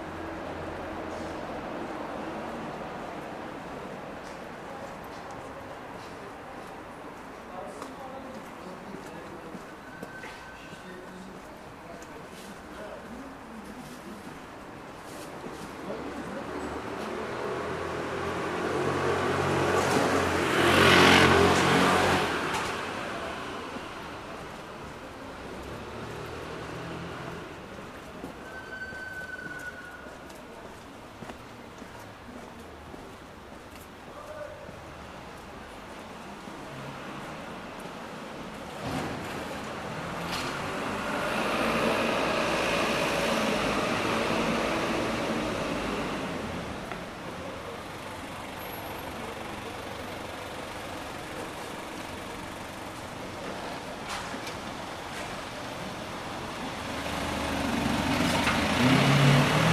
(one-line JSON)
{"title": "Fullmoon Nachtspaziergang Part VII", "date": "2010-10-23 23:33:00", "description": "Fullmoon on Istanbul, walking into quieter areas, towards Bomonti.", "latitude": "41.06", "longitude": "28.99", "altitude": "120", "timezone": "Europe/Istanbul"}